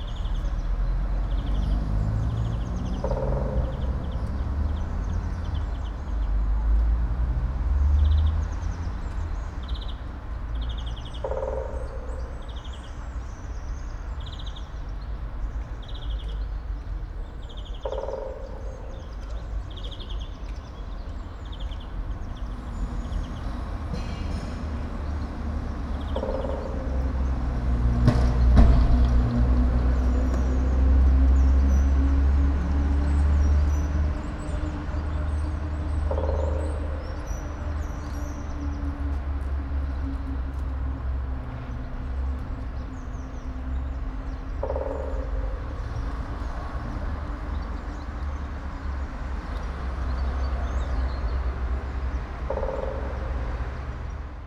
all the mornings of the ... - apr 19 2013 fri